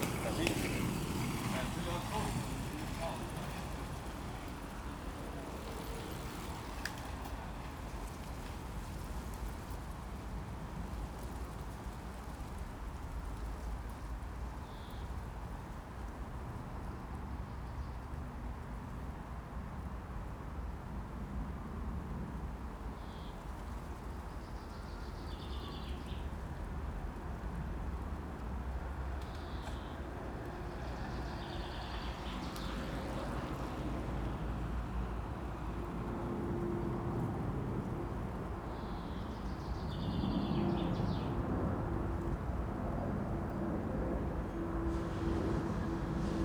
Atmosphere beside the abandoned ice factory, Ledařská, Praha, Czechia - Passing cyclists, roller bladders, beside the ice factory
The road beside the old abandoned ice factory is now part of a cycle route, so people on bikes, rollerblades and scooters pass by heard against the constant background of traffic that dominates the area. There is a distant chaffinch singing. A plane and electric-car co-incide towards the end of the recording, the aircraft totally drowning out the sound of the car.